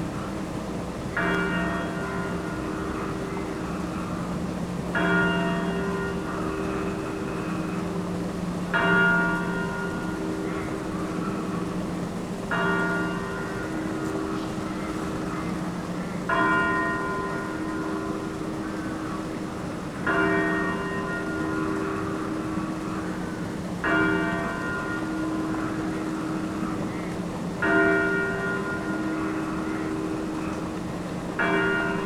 Lithuania, Kavarskas, spring, bells, crows
a walk: funeral bells of local church, holy spring and crows
Lietuva, European Union, 14 April 2013